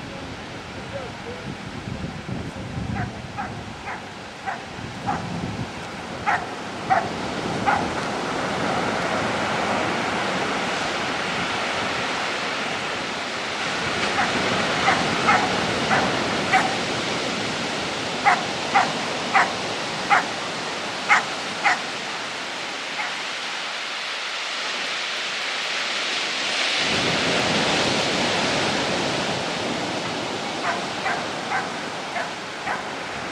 Bakio (basque country)
is the first Sunday in November and its a sunny day. People and puppies surfing and walking along the beach.